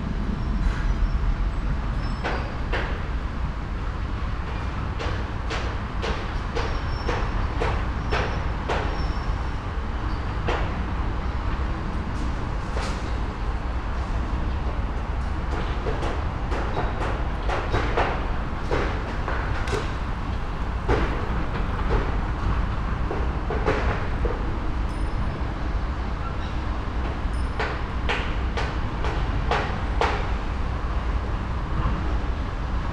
Elgar Road, Reading, UK - Construction site building sounds
The continuing progress of 112 new homes being built across the river from where i live. Sony M10 with custom made boundary device using a pair of Primo omni mics.
17 July 2018, ~15:00